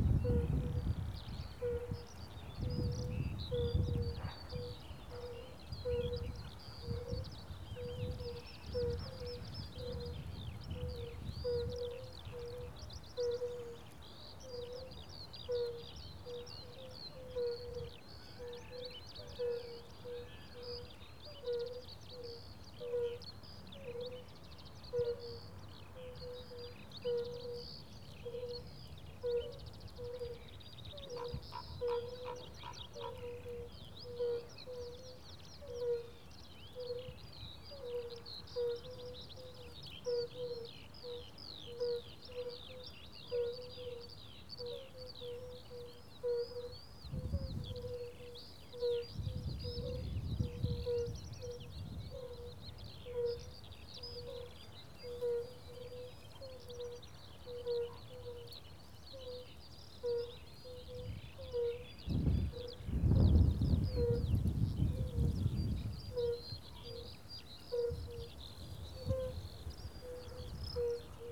Morasko, pond near Poligonowa road - pond life and military
no idea what sort of animal makes the continuous, pulsating, whining sound. it stopped immediately as i made a step forward and got back on sounding again as i back retreated. a military helicopter mixes in later in the recording.